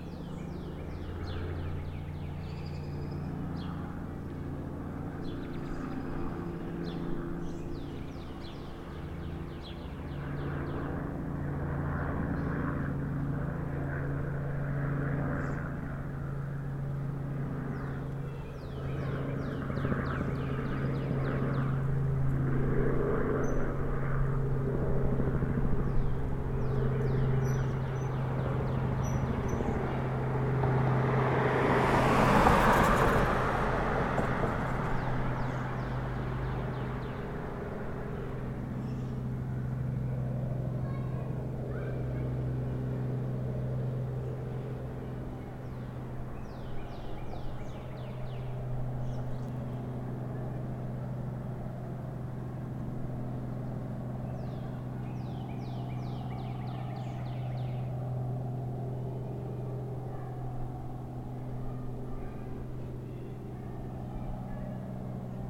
Corner of Vesta and Robinwood - Posh residential street in lockdown
Recorded (with a Zoom H5) at an intersection in the expensive Toronto neighbourhood of Forest Hill.